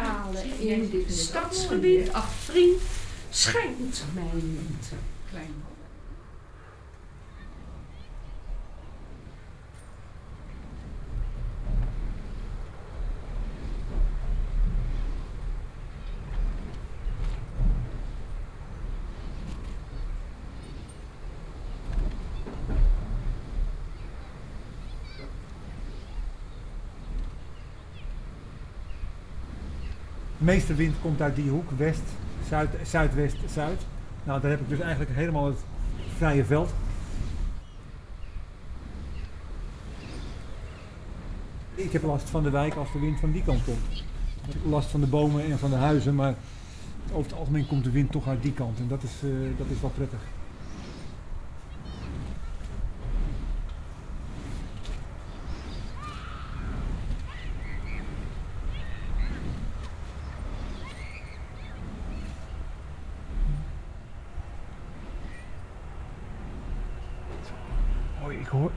zie (en hoor) mij malen in dit stadsgebied
het suizen van de wieken : zie (en hoor) mij malen, zie mij pralen in dit stadsgebied ....
rustling sound of the turning wicks
9 July 2011, ~18:00